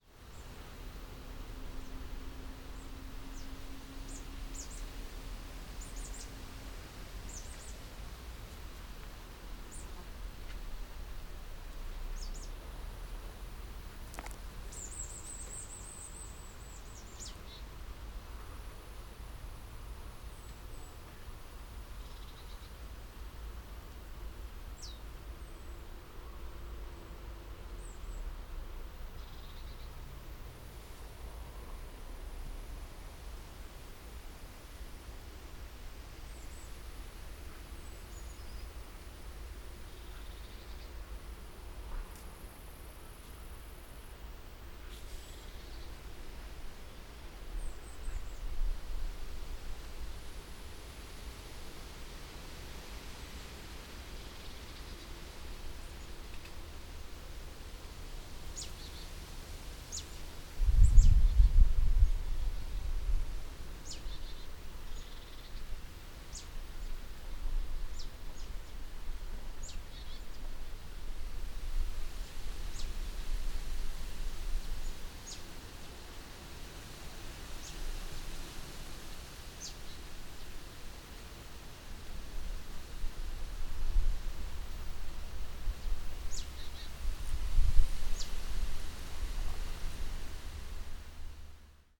Am Adelsberg, Bad Berka, Germany - Breezes, birds and drones beneath Paulinentrum Bad Berka.
Best listening with headphones.
A relaxed atmosphere with soft to strong breezes, sounds of birds and drones of distant vehicular traffic.
This location is beneath a tourist attraction "Paulinenturm".The Paulinenturm is an observation tower of the city of Bad Berka. It is located on the 416 metre high Adelsberg on the eastern edge of the city, about 150 metres above the valley bottom of the Ilm.
Recording and monitoring gear: Zoom F4 Field Recorder, LOM MikroUsi Pro, Beyerdynamic DT 770 PRO/ DT 1990 PRO.